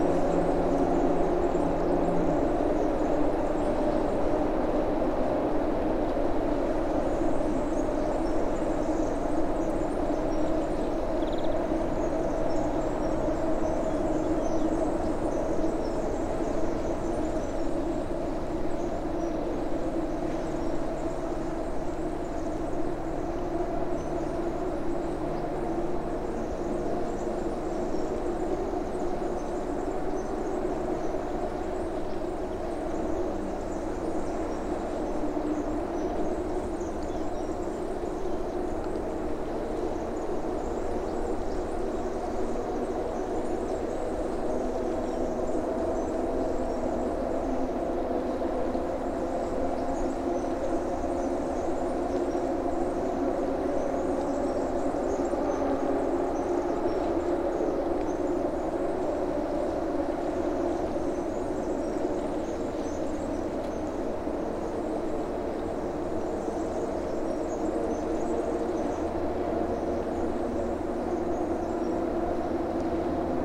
Almada, Portugal - Bridge cars and birds
Sounds of cars crossing the Tagus (tejo) river through bridge, a train and nearby birds. Recorded with a MS stereo set (AKG CK91/94) into a Tascam dr-70d.
18 March, ~12:00